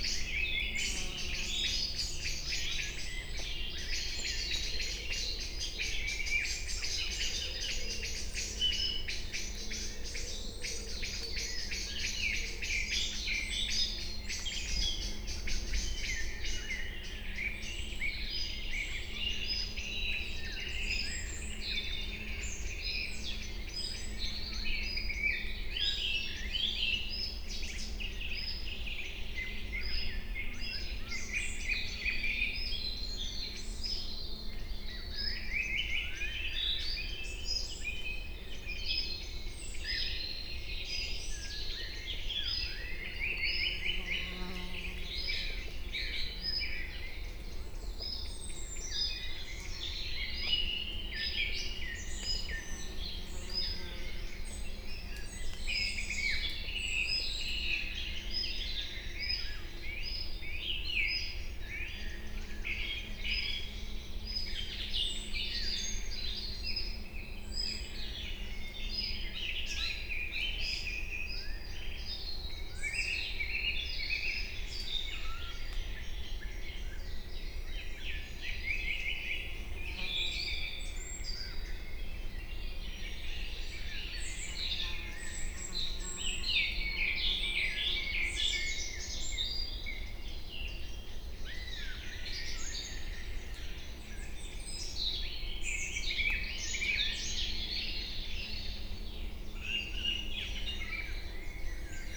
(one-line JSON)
{"title": "Bratislava, Slovakia - Evening birdsongs in Little Carpathians forest", "date": "2022-05-08 19:10:00", "description": "Part of bird evening chorus in forest (deciduous| in Small Carpathian mountains near Bratislava.", "latitude": "48.21", "longitude": "17.09", "altitude": "374", "timezone": "Europe/Bratislava"}